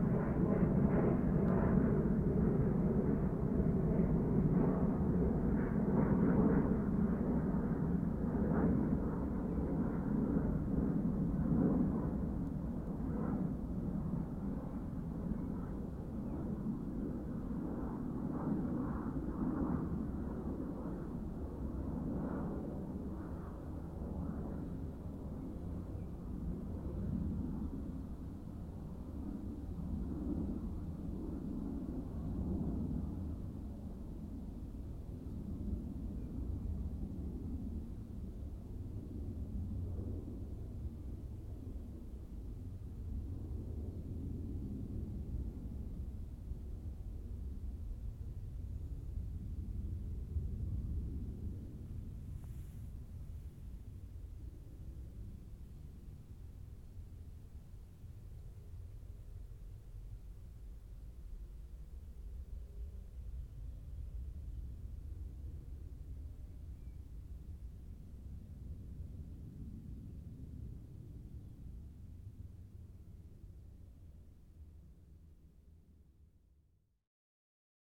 Borne Sulinowo, Polska - military jet - binaural rec
Military jet doing two practice laps over the city. Binaural records.
Borne Sulinowo, Poland